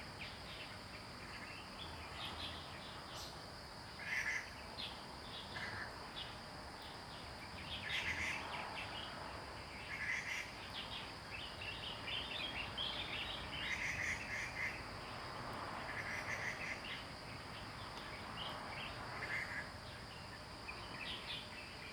桃米社區工坊, Puli Township, Nantou County - Birds and Traffic Sound
Birds singing, Traffic Sound
Zoom H2n MS+XY